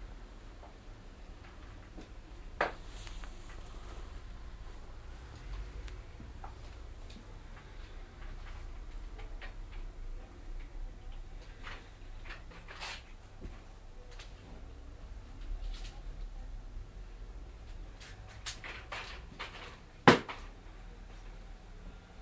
inside cologne's most famous art book store in the morning time
soundmap nrw - social ambiences and topographic field recordings